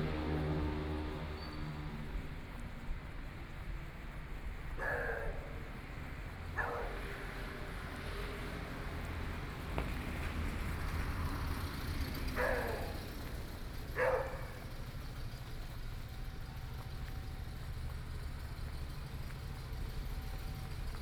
Datong Rd., Xihu Township - Night street
Night walk in the streets of the town, Traffic Sound, Dogs barking, Binaural recordings, Zoom H6+ Soundman OKM II
Xihu Township, Changhua County, Taiwan, 3 January 2014